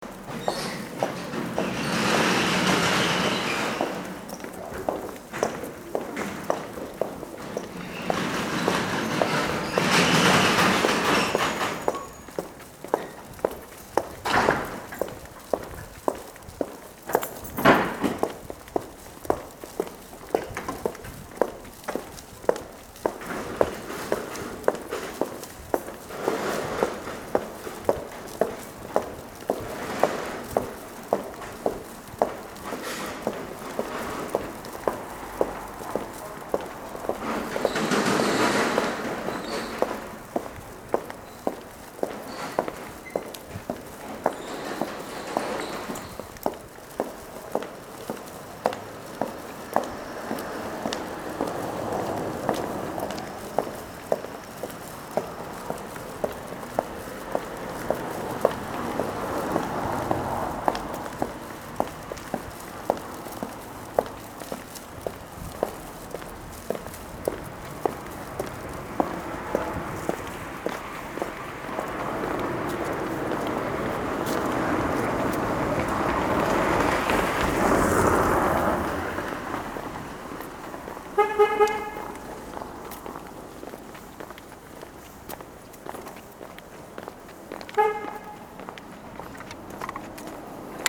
a woman walks through a narrow street at the time of shops closure: doors are locking and rolling shutters closing with their noisy crack
2 November, ~19:00